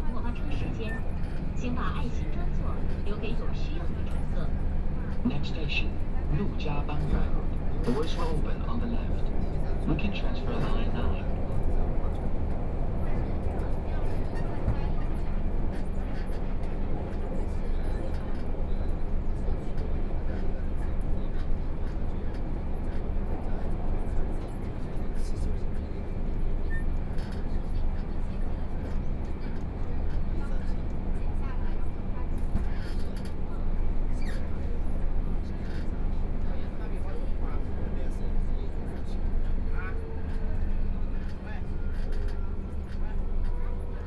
Huangpu District, Shanghai - Line 8 (Shanghai Metro)
From South Xizang Road Station to Laoximen station, Binaural recording, Zoom H6+ Soundman OKM II